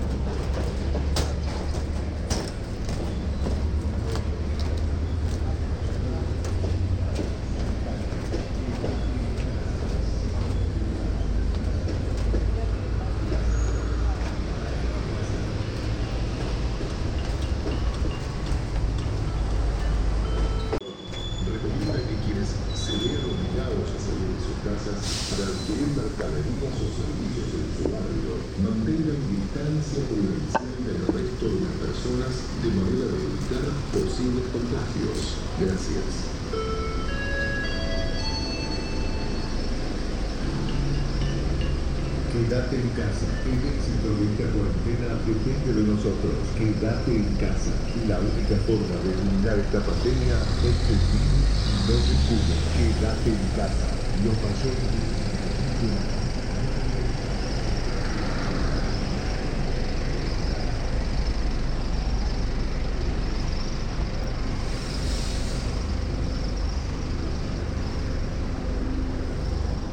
Estacion El Palomar, Provincia de Buenos Aires, Argentina - COVID announcement at the train station
Walking out of the train station, an almost desert street and a car passes by blasting a government annoucement of COVID lockdown.